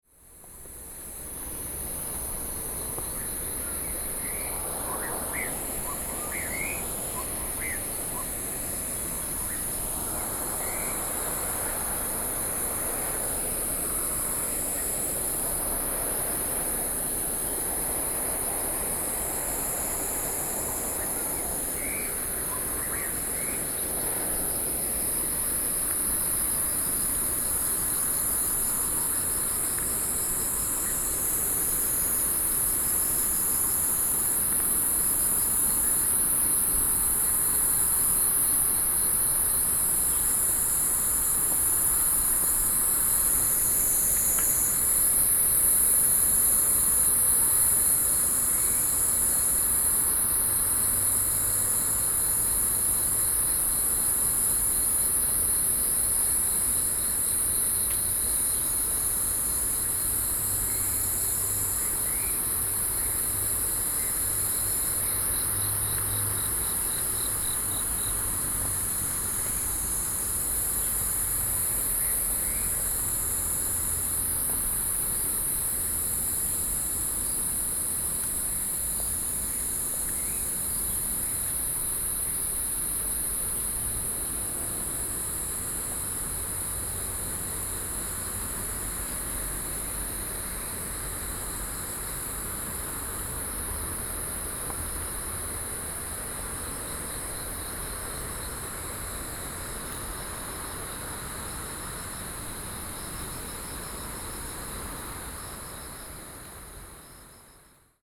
Shilin District, Taipei - The sound of water
in the Park, The sound of water, Sony PCM D50+ Soundman OKM II
台北市 (Taipei City), 中華民國, 23 June, ~07:00